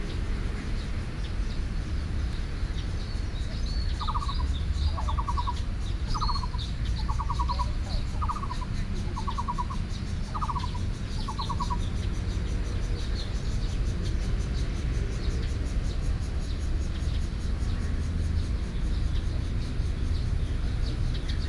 林口社區運動公園, Linkou Dist. - in the Park
in the Park, Birds sound
Sony PCM D50+ Soundman OKM II